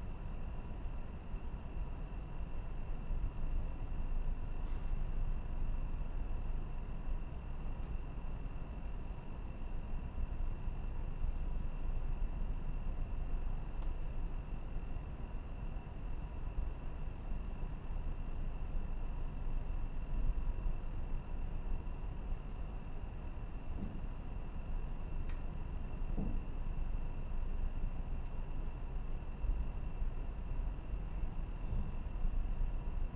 International Criminal Tribunal for the Former Yugoslavia Den Haag, Netherlands - ICTY Ambience
Ambience of a corridor and one of the courtrooms at the ICTY, Den Haag
Zuid-Holland, Nederland, 3 October 2016